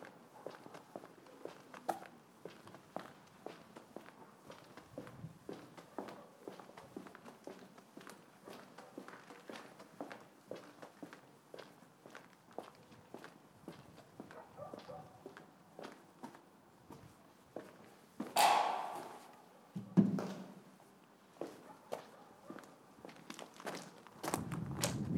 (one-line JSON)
{"title": "Rijeka, Trsat, Campus, NewUniversityBuilding, SoundWalk", "date": "2009-10-25 19:42:00", "description": "Inside & outside of new Buildings Under Construction, University Of Rijeka", "latitude": "45.33", "longitude": "14.47", "altitude": "140", "timezone": "Europe/Berlin"}